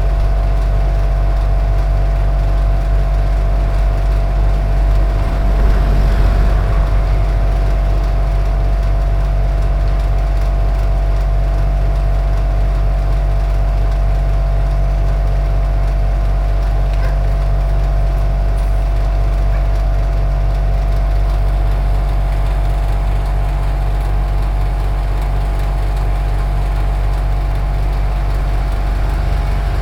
Montluel, Rue Simondy, a compressor
5 July 2011, ~11:00